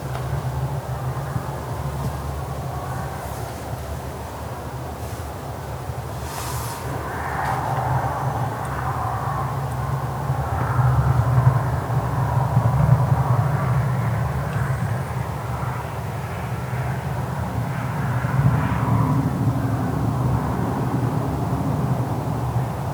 Before our tour of the Twentynine Palms Marine Combat Center, the largest military base on earth, the wind howled.